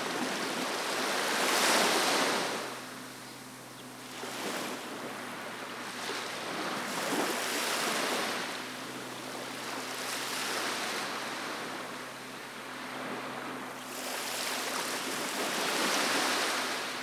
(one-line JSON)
{"title": "Spain - Waves Pegura Beach", "date": "2017-03-09 08:30:00", "description": "Waves lapping Segura Beach, it had been windy the day before but this day sunny and calm. Sony M10 Rode Stereo Videomic Pro X", "latitude": "39.54", "longitude": "2.45", "altitude": "1", "timezone": "Europe/Madrid"}